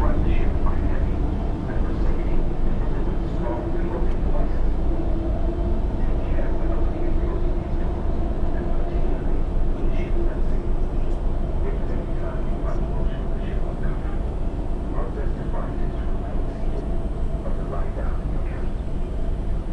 Cherbourg, France - The Sunken Hum Broadcast 286 - The Ferry Sounds Like A Ray Bradbury Novel - 13 Oct 2013
Taking the ferry from Cherbourg in France to Rosslare in Ireland. The voice coming over the pa sounded very like something out of an old 1984-esque sci fi novel
13 October